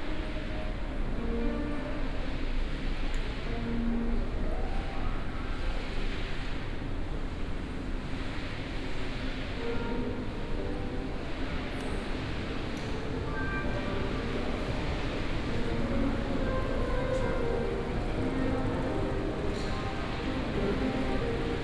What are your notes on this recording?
short walk in the Intercontinetal Hotel lobby, (Sony PCM D50, OKM2)